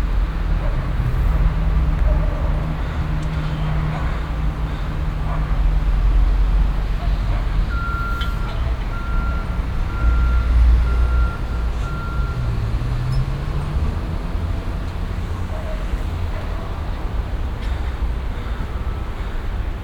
vancouver, construction close to bc palace - vancouver, construction close to bc place
early afternoon, workers in a secured road, some wind, some birds, the beeping sound of a construction car driving backwards
soundmap international
social ambiences/ listen to the people - in & outdoor nearfield recordings
new street close to bc place